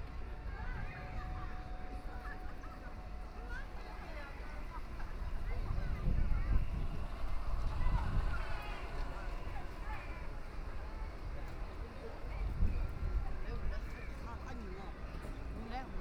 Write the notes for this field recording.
Walking through the park, Walking to and from the crowd, Duck calls, Binaural recording, Zoom H6+ Soundman OKM II